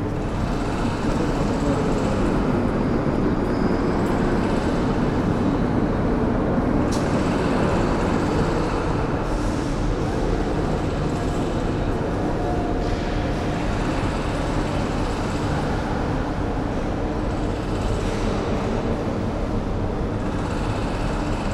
cathedrale notre dame, rouen
inside the cathedrale notre dame de rouen on a sunday afternoon